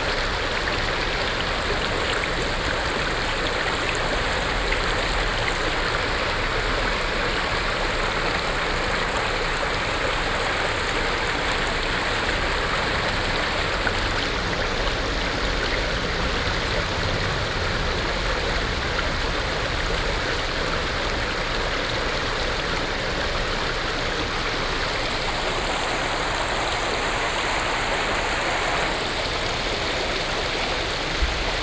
Mudan River, Shuangxi Dist., New Taipei City - Stream
New Taipei City, Taiwan, 29 June 2012